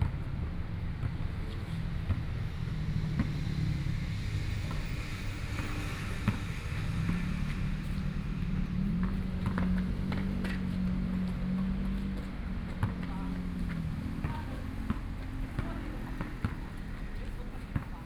紫陽里, Neihu District - small park
In the corner of the small park, Playing basketball voice, Chat between elderly
Binaural recordings
Taipei City, Taiwan, 15 March 2014